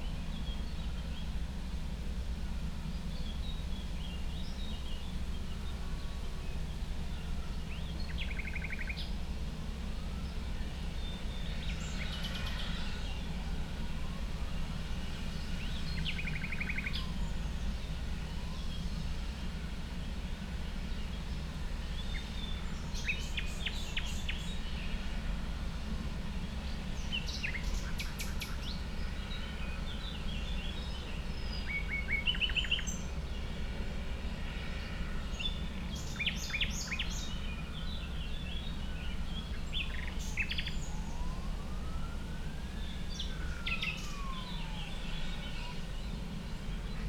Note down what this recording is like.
in the fields direction Chorzów, allotment garden, a nightingale, a chuckoo, wind and various anthropogenic sounds of unclear origin, (Sony PCM D50, DPA4060)